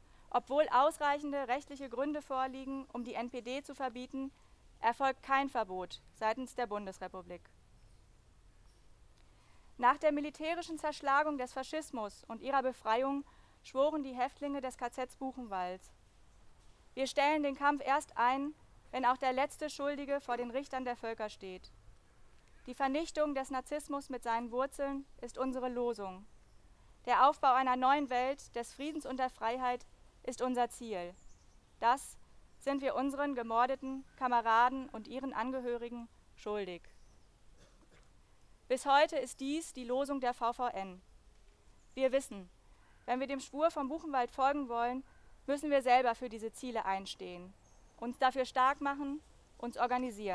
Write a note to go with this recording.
Rede von Nicole Drücker, Mitglied der VVN-BdA